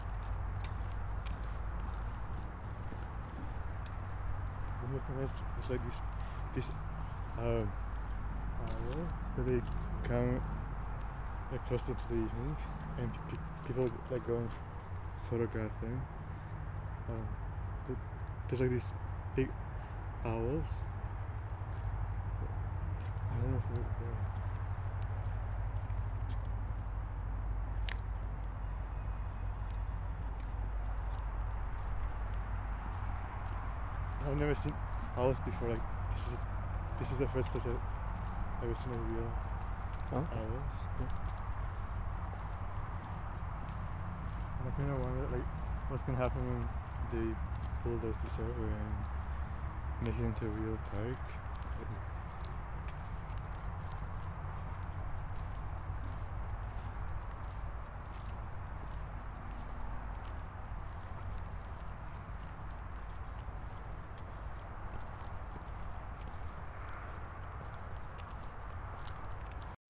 Centenary Park, Calgary, AB, Canada - Owls
This is my Village
Tomas Jonsson